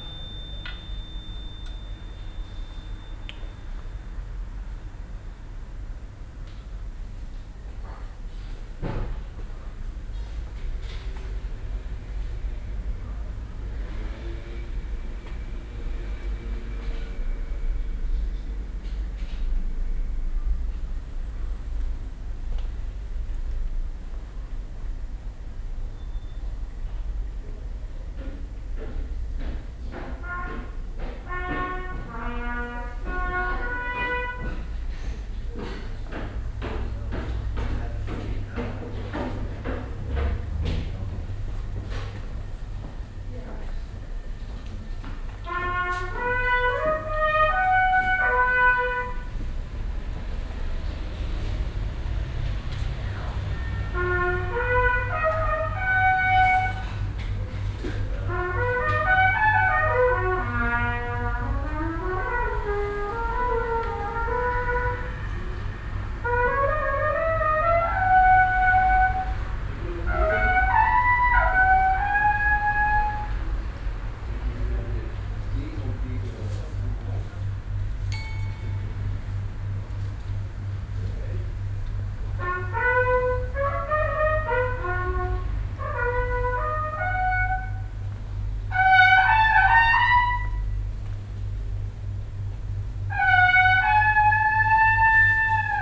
cologne, tunisstrasse, musikhaus tonger
am frühen abend im musikhaus, einzelne instrumentenklänge, trompetenetitüden
soundmap nrw:
social ambiences, topographic fieldrecordings, listen to the people